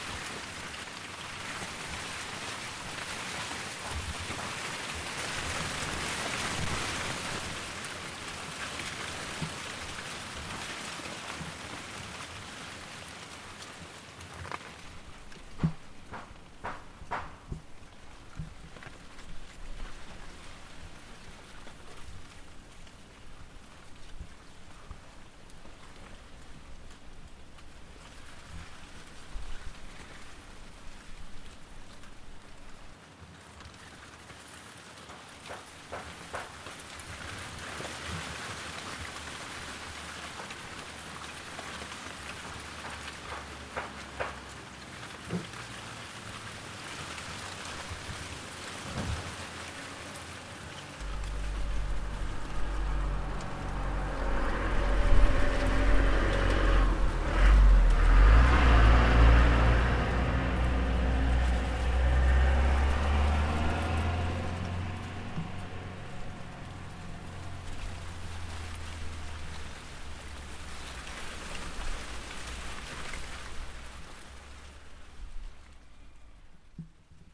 Rue de l'Église, Chindrieux, France - Bananier
Feuilles de bananier dans le vent, ici les feuilles des bananier sont souvent en lambeaux et en partie sèches nous sommes loin du cliat tropical humide, elles sonnent d'une manière particulière dans le vent en se frottant les une contre les autres.
France métropolitaine, France